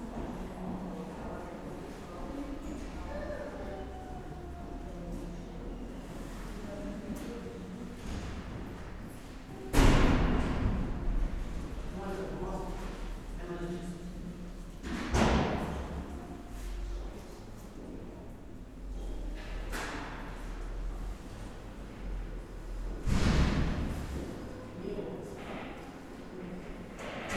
berlin, donaustraße: rathaus neukölln, bürgeramt, gang - the city, the country & me: neukölln townhall, citizen centre, hallway
the city, the country & me: february 18, 2013
Deutschland, European Union, 18 February 2013, 10:53